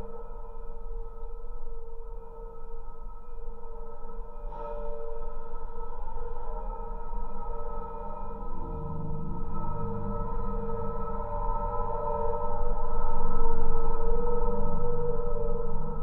Vilnius, Lithuania, trolleybus pole
Geophone attached to trolleybus pole